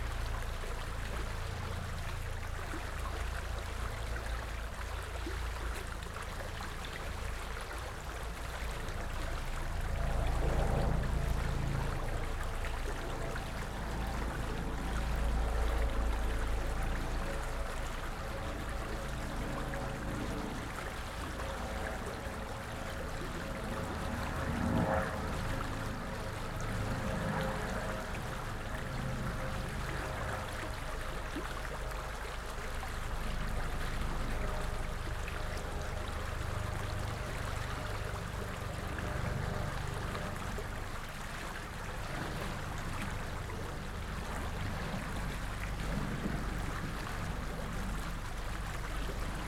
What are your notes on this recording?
*Binaural - Please wear headpones. Flight over a stream in a small city in Germany called Bad Berka.. In the sound: Helicopter engine appearing in the left channel and disappearing in the right channel. Gentle splashes and laps of the stream serve as baseline of the soundscape. A car engine passes by in the left channel. Gear: LOM MikroUsi Pro built into binaural encoder and paired with ZOOM F4 Field Recorder.